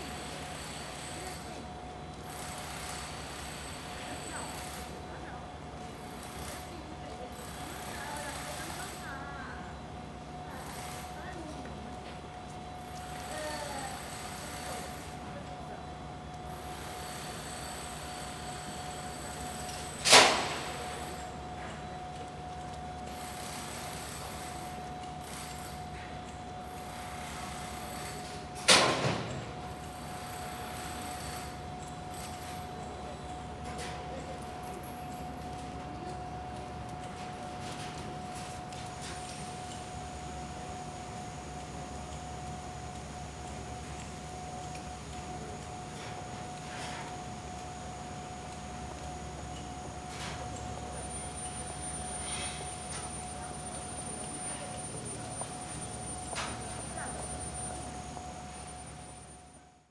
Porto, Praça da Batalha - hollow building
construction works on one of the buildings at Praça da Batalha. only the front of the building is still standing. rest is an empty shell. the sounds of demolition echo around the whole district.
Porto, Portugal, 30 September 2013